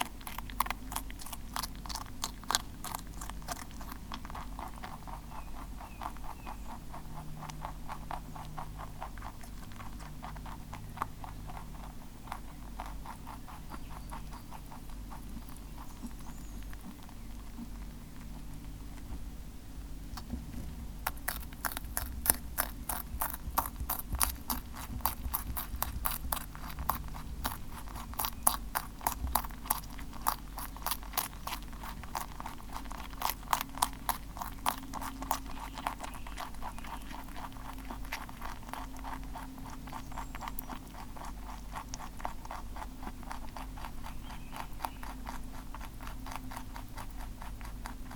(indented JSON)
{
  "title": "Court-St.-Étienne, Belgique - Rabbit eating",
  "date": "2016-07-11 17:10:00",
  "description": "Clovis the rabbit is eating some haricots.",
  "latitude": "50.62",
  "longitude": "4.54",
  "altitude": "128",
  "timezone": "Europe/Brussels"
}